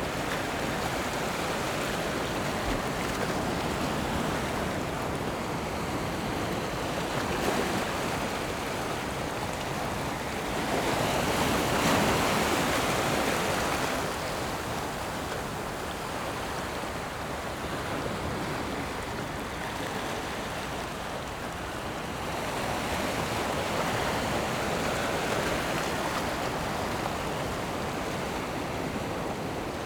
頭城鎮大里里, Yilan County - Sound of the waves
Sound of the waves, On the coast
Zoom H6 MS mic + Rode NT4
Toucheng Township, Yilan County, Taiwan, 21 July 2014, 17:16